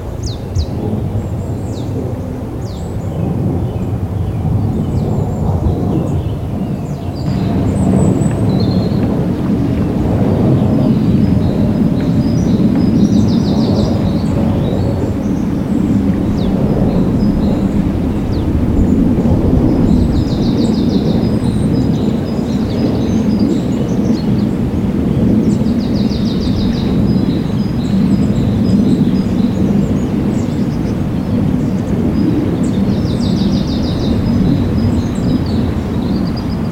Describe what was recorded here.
morgens im frühjahr 2007, starfighter übungsflug über dem tal, viel wind, im hintergrund arbeitsgeräusche aus wald und ferne kindergruppe, soundmap nrw: social ambiences/ listen to the people - in & outdoor nearfield recordings